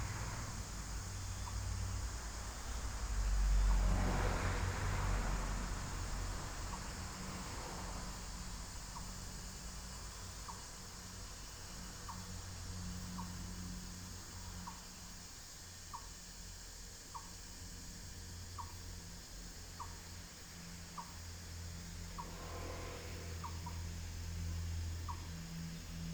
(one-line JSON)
{"title": "本開庄福德祠, Guanxi Township - Birds and Cicada", "date": "2017-08-14 17:00:00", "description": "Small temple, The sound of birds, Cicada, Traffic sound, under the tree, Binaural recordings, Sony PCM D100+ Soundman OKM II", "latitude": "24.77", "longitude": "121.20", "altitude": "172", "timezone": "Asia/Taipei"}